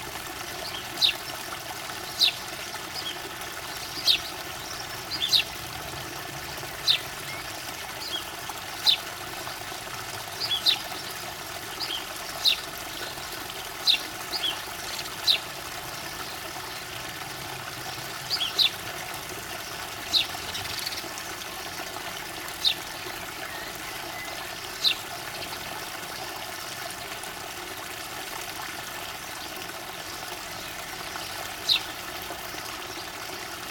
Greystoke, Cumbria, UK - Johnby spring morning
I woke up to hear the lovely sound of birds outside in the trees. I opened the window, and then the sound of those birds mixed with the din of the fountain in the garden mingled together while I stood and listened. There was a pheasant too. Naiant X-X microphones with little windjammer furries, and Fostex FR-2LE
England, United Kingdom, 6 June 2013